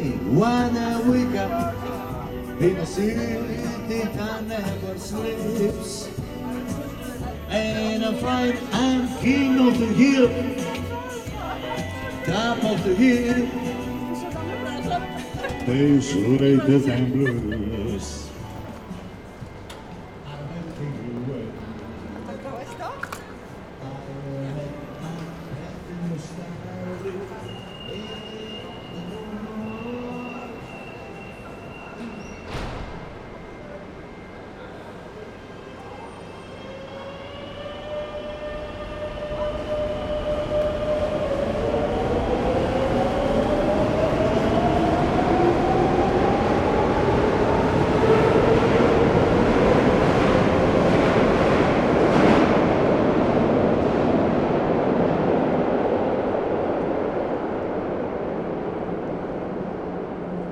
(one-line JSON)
{"title": "Madrid, subway, line - man sining new york, new york", "date": "2014-11-30 12:15:00", "description": "one of many subway performers entertaining passengers with frank sinatra's \"new york, new york\".", "latitude": "40.44", "longitude": "-3.68", "altitude": "703", "timezone": "Europe/Madrid"}